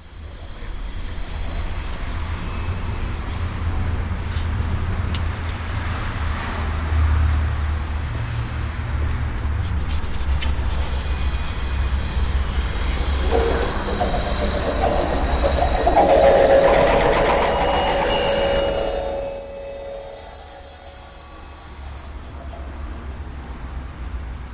seiji morimoto @ staalplaat berlin
seiji morimoto cleaned the storefront window (with contact micros) at staalplaat.